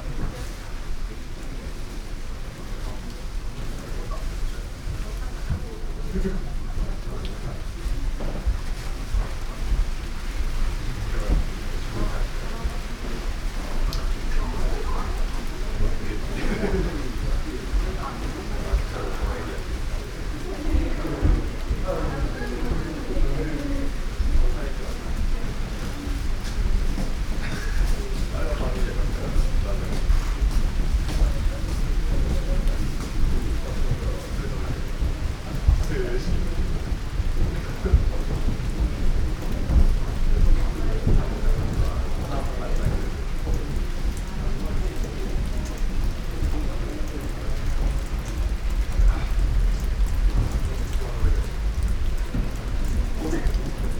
close to rain gutter, Nanzenji, Kyoto - raindrops on dry rock garden and kawara
Kyoto Prefecture, Japan, November 2014